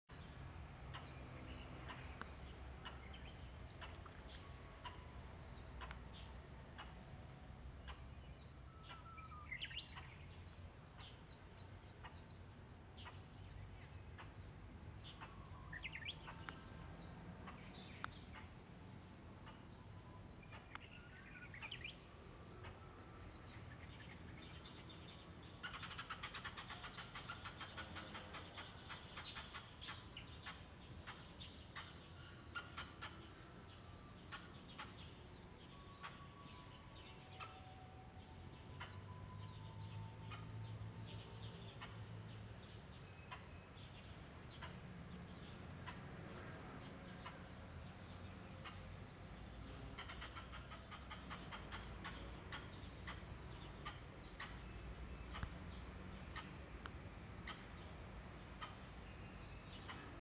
The play ground in JHONGI elementary school - Birds singing and people exercising 小鳥鳴叫與人們運動聲
Birds singing and people exercising in the play ground.